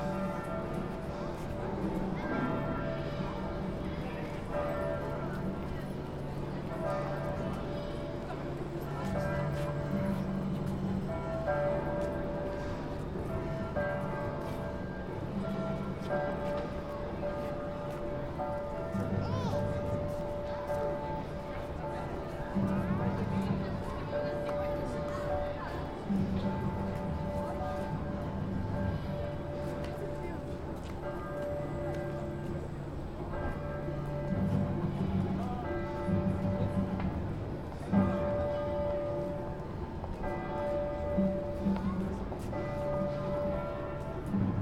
Vilnius, Lithuania, a walk - street music day
Street Music Day - yearly celebration in Lithuania. strange, apocalyptically sounding, mixture of street musicians with cathedral bells
Vilniaus apskritis, Lietuva, 18 July 2020